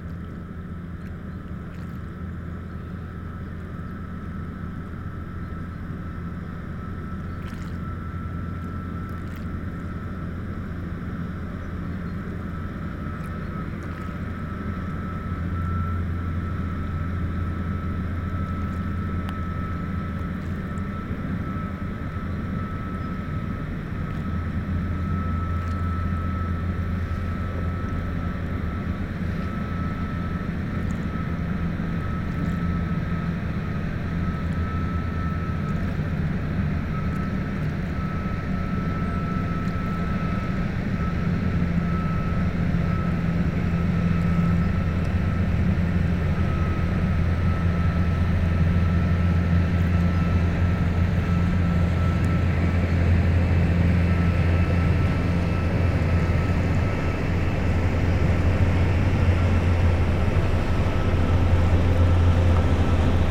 Two boats are passing on the Albert canal. The first one is small and slow. The second one is big and makes big waves. It's the Duchesse from Zwijndrecht. IMO number of this boat is 244660540 and it's an oil tanker. If you be very very careful hearing this second boat, you will hear, in the cabin, the small dog who hates me !! Poor driver ;-)
Riemst, Belgium, 20 January 2018, 08:30